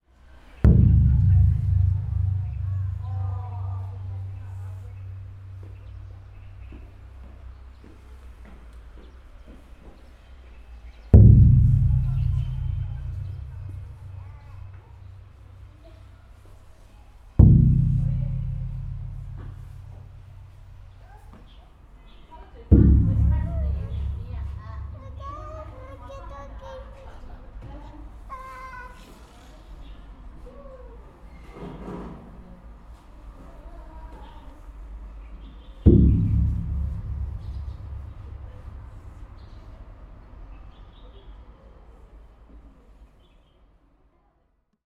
shouzu, china, bells - shouzu, china, drum
drum, tower, play, china, shouzu